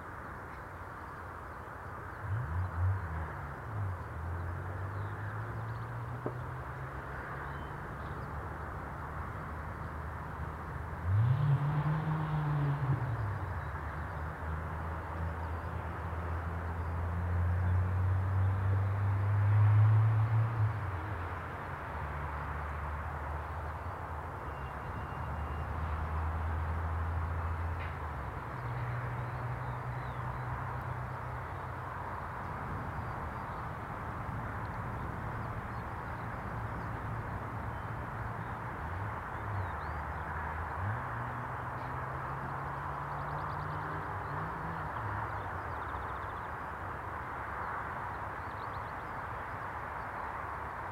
Contención Island Day 53 outer southwest - Walking to the sounds of Contención Island Day 53 Friday February 26th
The Drive Moor Place woodlands Oaklands Avenue Oaklands Grandstand Road Town Moor
A skylark murmers
reluctant to sing
in February sunshine
Flows of people
crisscross the moor
Jackdaw and common gull stand
as crows lumber into the wind
England, United Kingdom